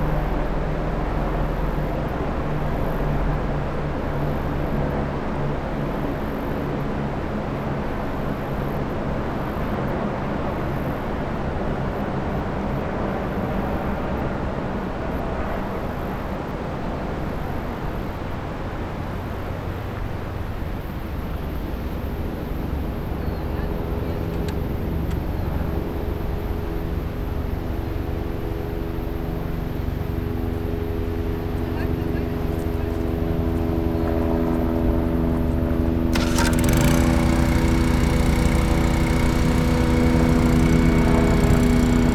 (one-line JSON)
{"title": "Fox Glacier, Westland-Distrikt, West Coast, Neuseeland - Intro to Fox Glacier", "date": "2017-02-26 11:44:00", "description": "Who would like to go to a f***ing place like a heliport?\nIt's a quarter to noon on Sunday 26th Feb. 2017. The breathtaking landscape of the Southern Alps and a parking lot. A well regulated walk to the Fox Glacier with lots of signs telling you not to dos. At 00:57 a \"caterpillar wheel barrow\" adds more noise - totally okay on a Sunday.\nHelicopters at all times!\nYou might not hear it, but at 11 to 16kHz there is song of a small critter.", "latitude": "-43.50", "longitude": "170.04", "altitude": "240", "timezone": "Pacific/Auckland"}